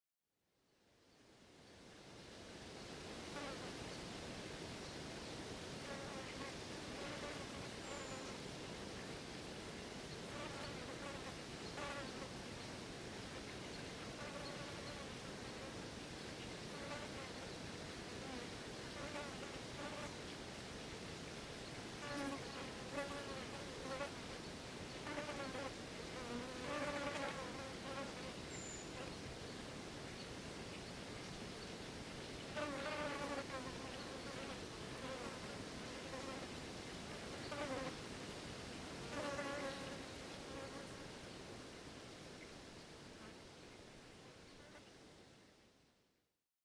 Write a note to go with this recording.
Recording in a small meadow and a fly became enamored with the mics. WLD 2013